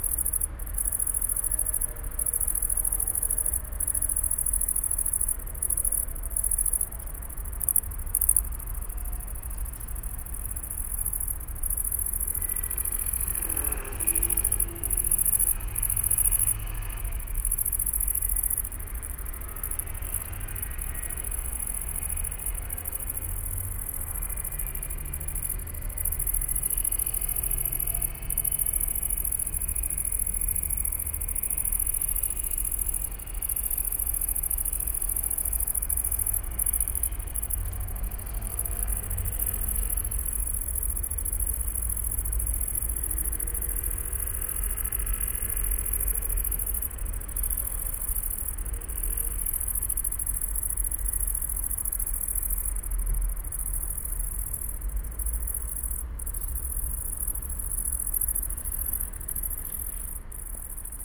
indistrial area at yet closed Bergiusstr., signs of ongoing construction works. evening ambience, intense crickets at the fence alomg the street.
(Sony PCM D50, DPA4060)
Berlin, Germany